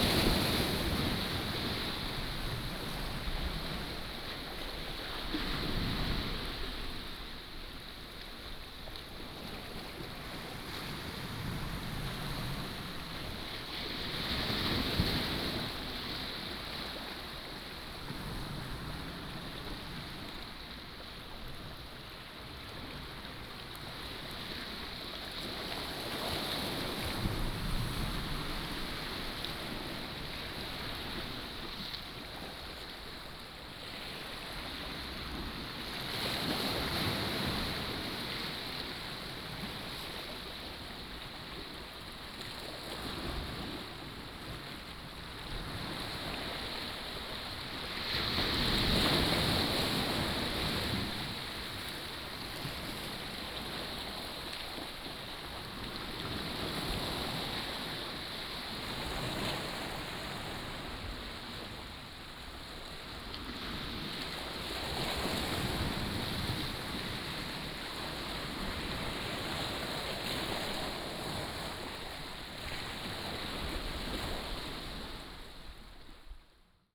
仁愛村, Nangan Township - sound of the waves
sound of the waves, On the beach